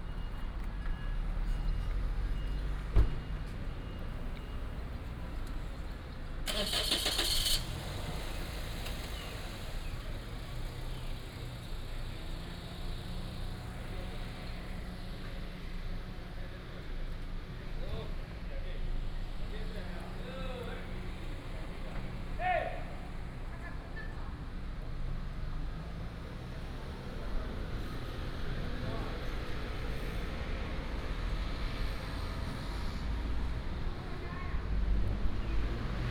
Xinshan St., Xihu Township - In the square of the temple
In the square of the temple, Traffic sound, sound of the birds
Changhua County, Taiwan, 6 April 2017, 12:45